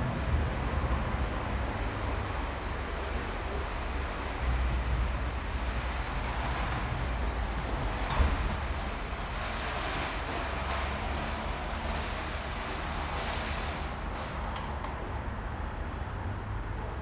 Sainsbury's, Portswood, Southampton, UK - 004 Staff (signing off), shoppers (going home)
Sainsbury's car park. Tascam DR-40
4 January 2017, ~21:00